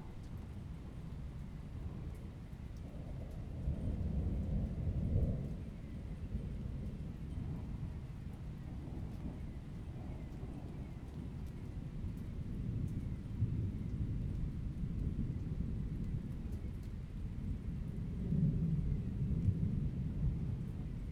Poznan, balcony - continuous thunder
a continues artillery of thunderstorms, rolling ceaselessly for an entire evening, fading in and out, triggering car alarms
Poznan, Poland